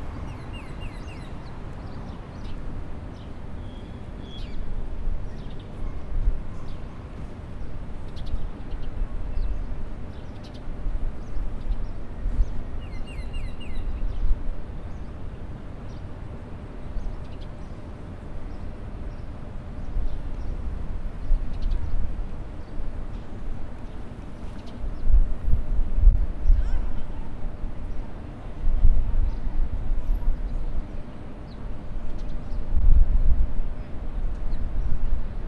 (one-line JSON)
{"title": "Bowen Ave, Auckland 1010", "date": "2010-09-30 14:37:00", "description": "Birds birds birds...", "latitude": "-36.85", "longitude": "174.77", "altitude": "1", "timezone": "Pacific/Auckland"}